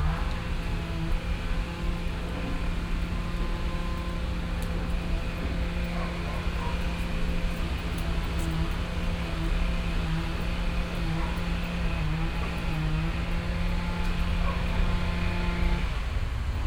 Düsseldorf, Hofgarten, Jägerhofpassage
Mittags im Fussgängertunnel unter der stark befahrenen Jägerhofstrasse - Arbeitslärm vom Baumschnitt, Schritte und Fahrradfahrer - eine lose Gitterabdeckung.
soundmap nrw: social ambiences/ listen to the people - in & outdoor nearfield recordings
21 August 2008, 10:42